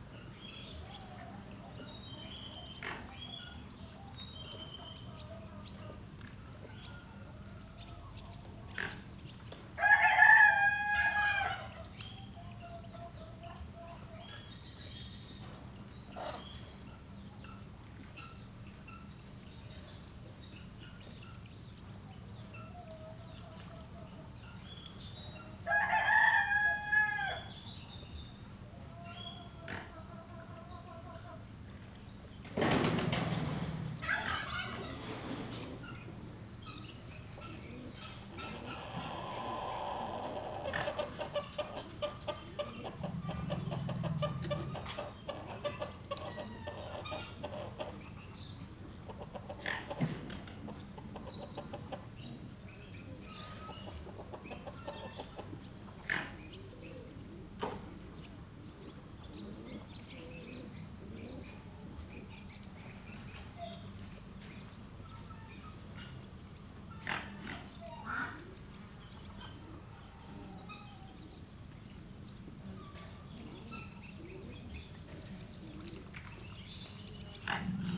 SBG, El Petit Zoo den Pere - Mañana
Ambiente en el Petit Zoo den Pere una mañana de verano.
Spain, 4 August 2011, 09:30